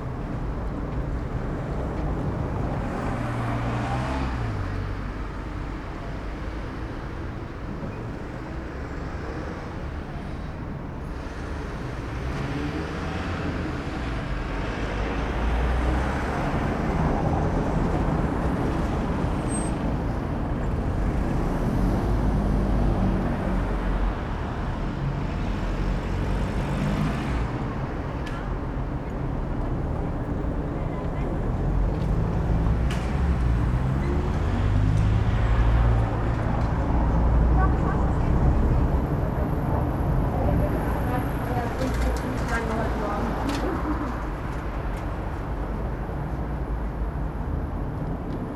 Berlin: Vermessungspunkt Friedelstraße / Maybachufer - Klangvermessung Kreuzkölln ::: 30.11.2011 ::: 16:20
Berlin, Germany, 30 November 2011